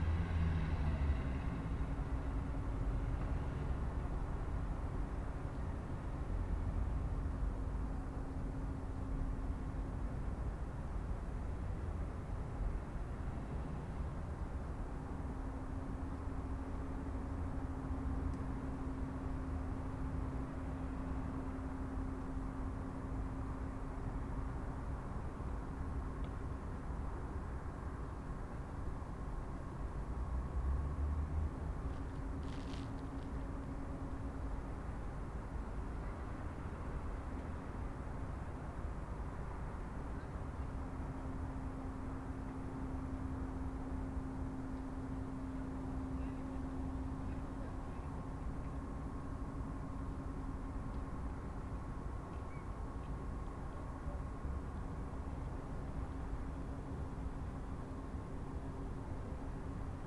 Ulica heroja Jevtiča, Maribor, Slovenia - corners for one minute
one minute for this corner: ulica heroja Jevtiča 4
20 August 2012, ~20:00